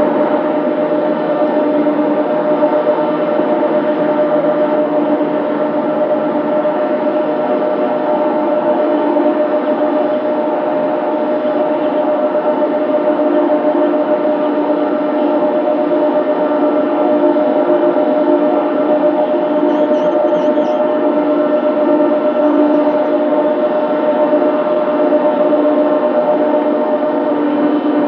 Unnamed Road, Coppenbrügge, Germany WIND POWER TURBINE - SOUNDS OF WIND POWER TURBINE
Wind Power Plants Recordings in Coppenbrügge.
ZOOM H4n PRO Recorder
Shotgun Microphone